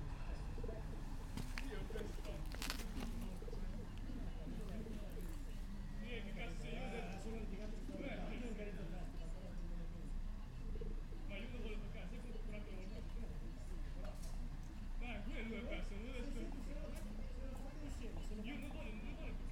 Via Vintler, Bolzano BZ, Italia - 26.10.19 - dopo una rissa al parco Vintola
Parco davanti al Centro Giovani Vintola 18: un uomo interviene a sedare una rissa tra due ragazzi.
registrato Massimo Carozzi